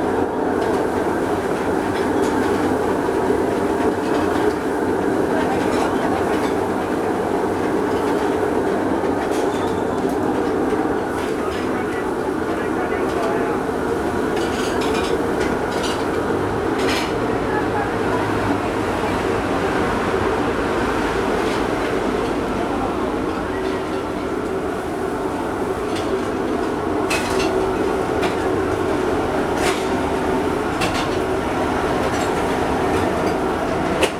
Restaurant cooking sound, Traffic Noise, Rode NT4+Zoom H4n
12 February, 18:15